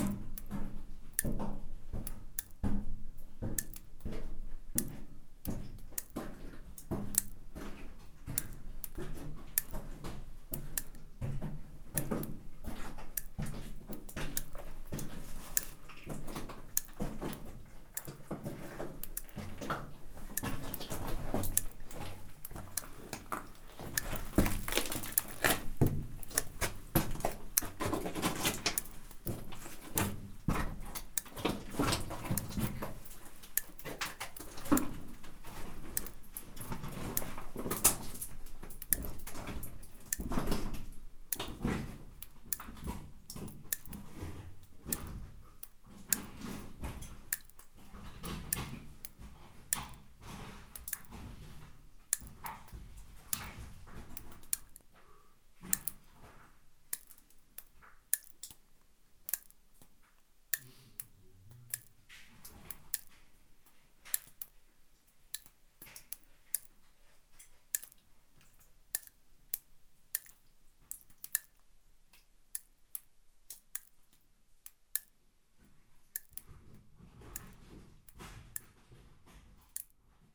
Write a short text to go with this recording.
In an underground cement mine, drops are falling from an inclined tunnel.